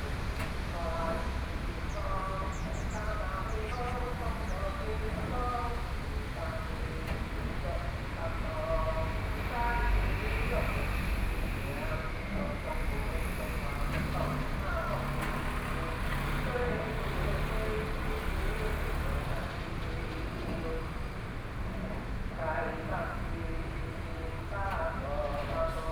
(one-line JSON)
{"title": "Xinsheng N. Rd., Taipei City - ghost festival", "date": "2013-08-17 15:18:00", "description": "ghost festival, Standing on the roadside, Sound Test, Sony PCM D50 + Soundman OKM II", "latitude": "25.07", "longitude": "121.53", "altitude": "18", "timezone": "Asia/Taipei"}